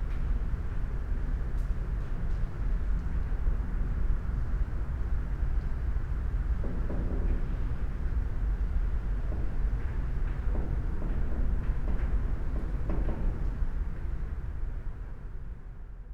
Punto Franco Nord, house, Trieste, Italy - metal doors
wind touching metal doors ... on the ground floor of abandoned house number 25 in old harbor of Trieste, seagulls and train from afar